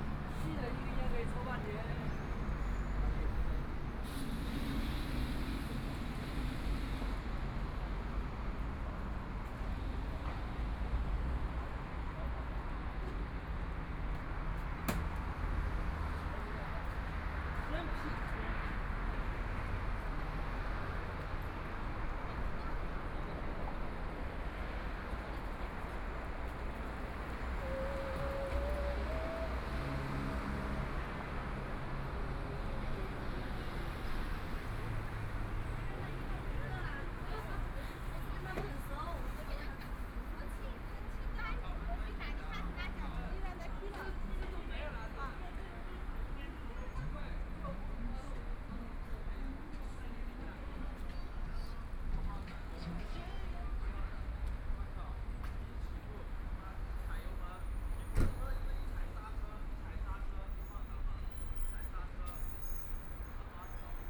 四平路, Shanghai - walking in the Street
walking in the Street, traffic sound, Binaural recording, Zoom H6+ Soundman OKM II